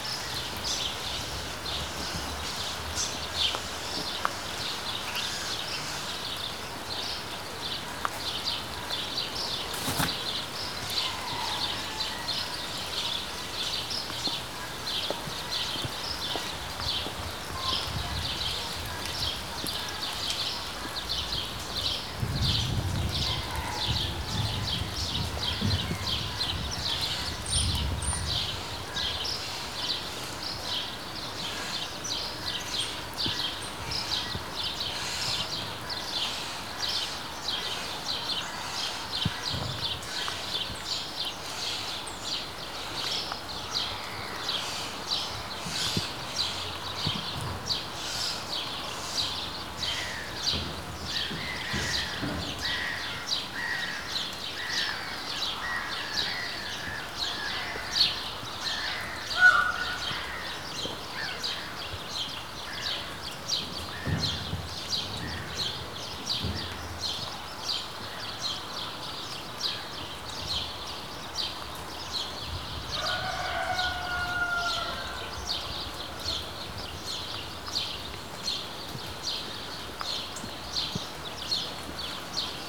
Morasko, near garbage truck depot - bird activity during light rain
swarms of birds active in a small park nearby. spacey chirps. rosters and peacocks behind the fence voicing their presence. faint sounds of a mass in a church a few hundreds meters away. worker power-washing the trucks on the other side.
Poznan, Poland